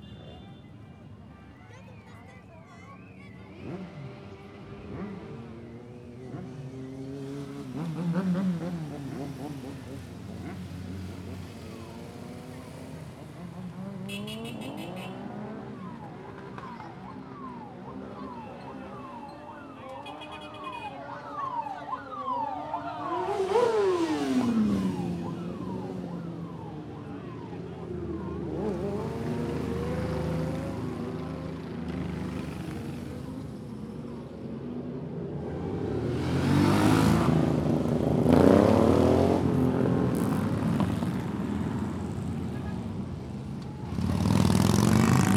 Leba, city center, bridge over Leba canal - biker's parade
a numerous group of bikers cursing around the city, cranking up the engines, spinning wheels, sputtering the exhaust pipes, showing off. crowds cheering the bikers.
Leba, Poland